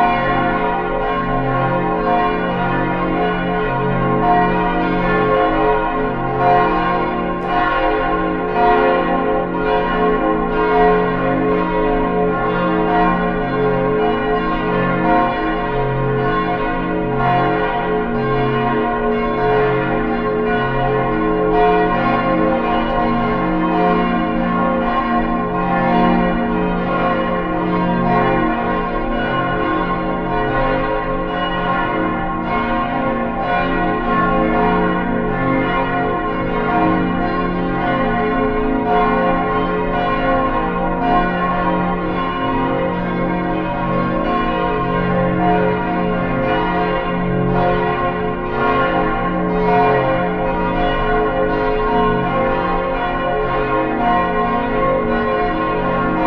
Sound of the Bells of the Jesuit Church recorded in a backyard close by. Recorded with a Sound Devices 702 field recorder and a modified Crown - SASS setup incorporating two Sennheiser mkh 20 microphones.